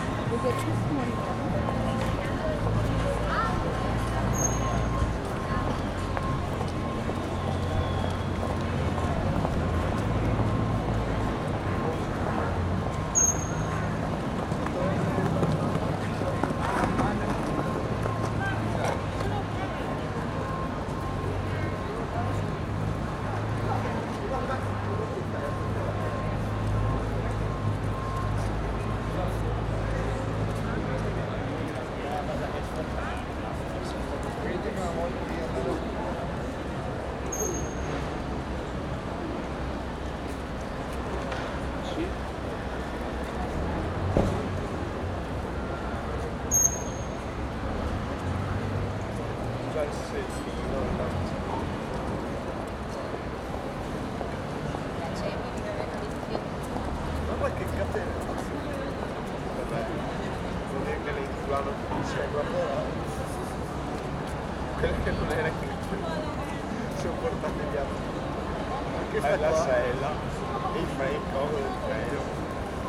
Barcelona, Avinguda del Portal de l´ Angel, vor dem El Corte Ingles mit der quietschenden Tür